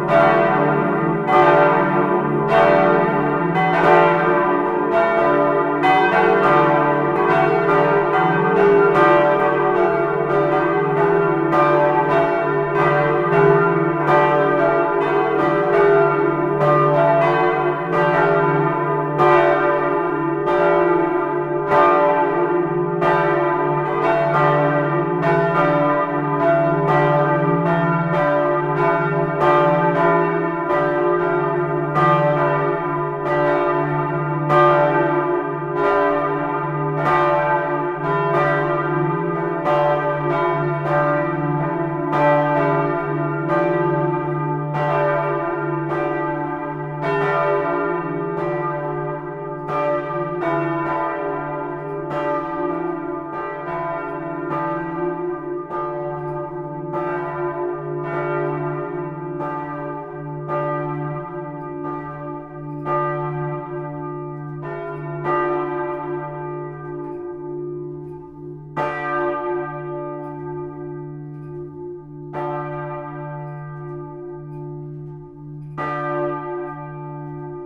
Gembloux, Belgique - Gembloux bells
The Gembloux belfry bells, ringed all together by Emmanuel Delsaute.